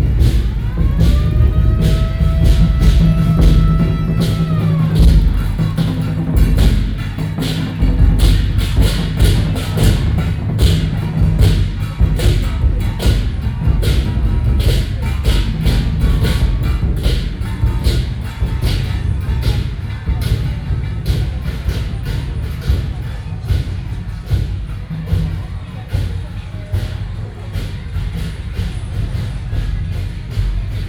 Taiwan, Taipei city - Traditional temple festivals
Firework, Traditional temple festivals, Traditional musical instruments, Binaural recordings, Sony PCM D50 + Soundman OKM II, ( Sound and Taiwan - Taiwan SoundMap project / SoundMap20121115-6 )
15 November 2012